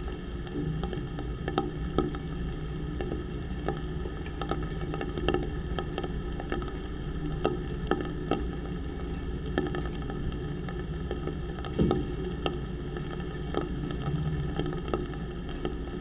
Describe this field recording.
small omni microphones inside some pipe (light pole laying on the ground)...it's raining...